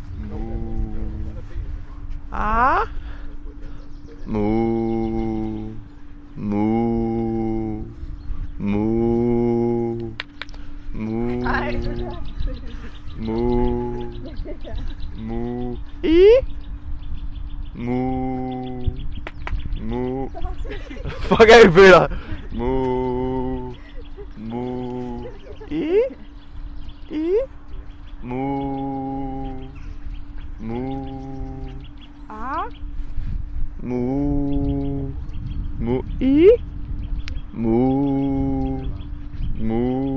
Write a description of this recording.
Blind russian girl navigates by sound